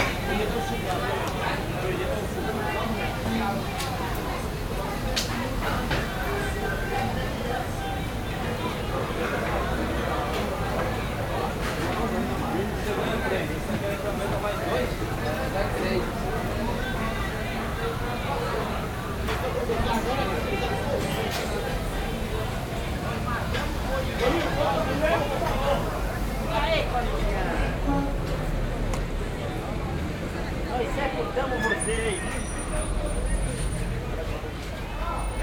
Sao Paulo, entrance market hall